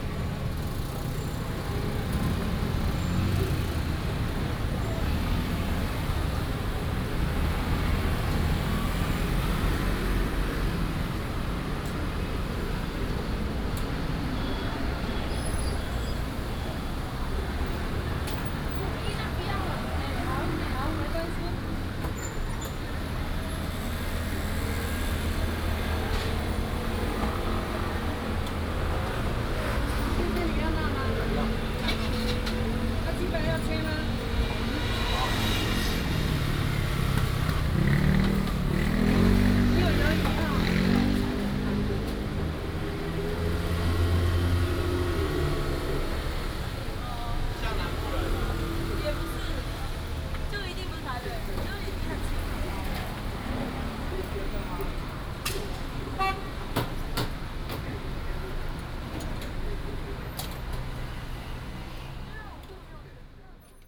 Ln., Beixin Rd., Tamsui Dist., New Taipei City - the corner of the road
In the corner of the road, Fried chicken shop, Traffic Sound
Binaural recordings